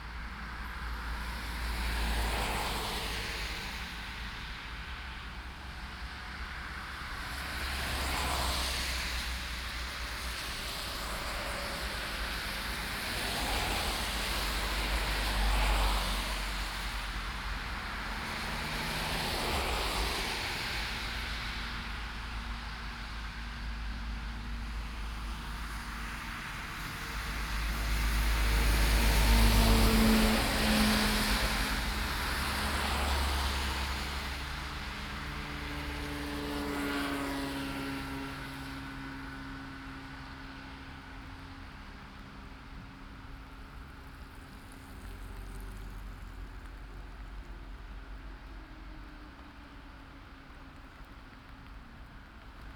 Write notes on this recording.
traffic, binaural, recorded for the quEAR soundart festival 2011